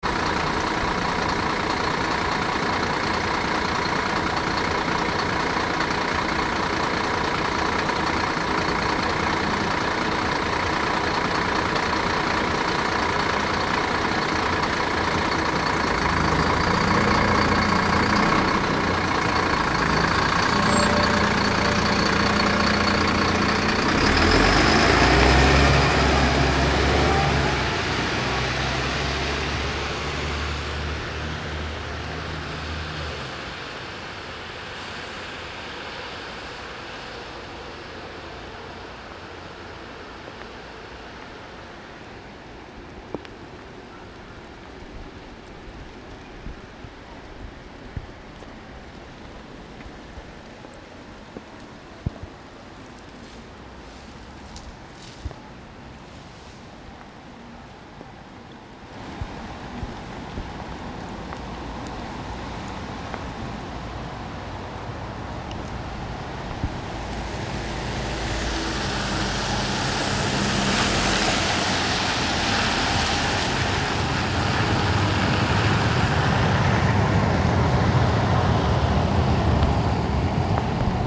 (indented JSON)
{
  "title": "Lime Street, Newcastle upon Tyne, UK - Lime Street",
  "date": "2019-10-13 15:28:00",
  "description": "Walking Festival of Sound\n13 October 2019\nBus, Car and train sound outside Cluny Bar",
  "latitude": "54.98",
  "longitude": "-1.59",
  "altitude": "15",
  "timezone": "Europe/London"
}